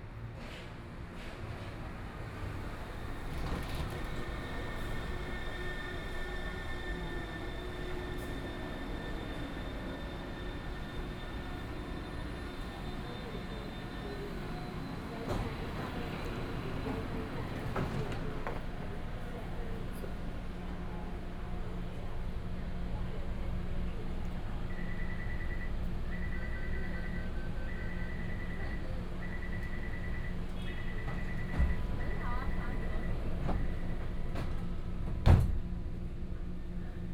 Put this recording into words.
from Zhongshan Junior High School station to Zhongxiao Fuxing station